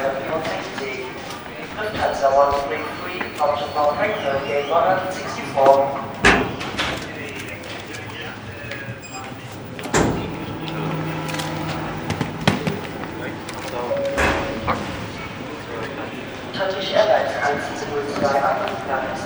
The walk from check-in to over-sized luggage check-in, up onto the balcony above the main arrival hall and back through a small cafe into the line for security screening.
Leinfelden-Echterdingen, Germany, 20 May, ~11:00